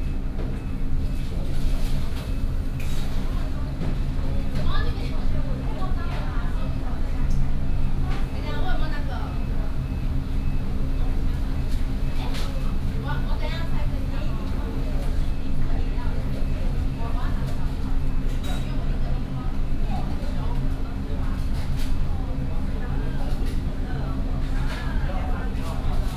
Sec., Zhongyang N. Rd., Beitou Dist. - In the fast-food restaurant
In the fast-food restaurant, McDonald's